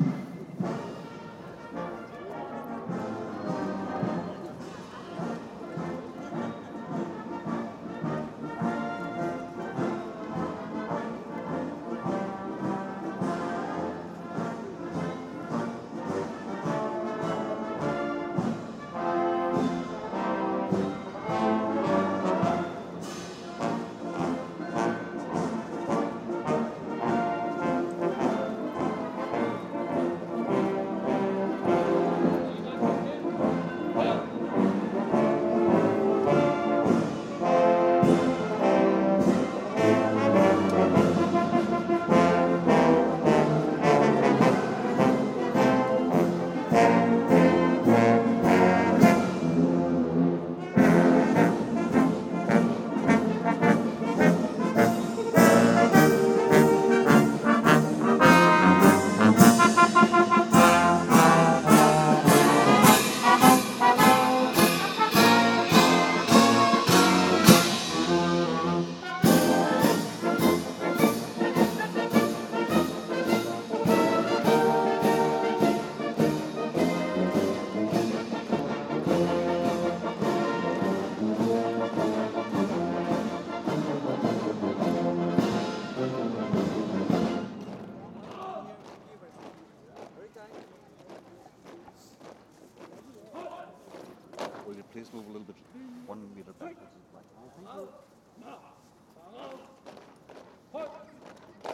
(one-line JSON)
{"title": "Amalienborg Royal marches, København Denmark - Changing of the Amalienborg Royal guards", "date": "2013-09-26 12:00:00", "description": "A marching band accompanies the changing of the Royal guard at Amalienborg palace in Copenhagen. Tascam DR-100 with built in uni mics.", "latitude": "55.68", "longitude": "12.59", "altitude": "8", "timezone": "Europe/Copenhagen"}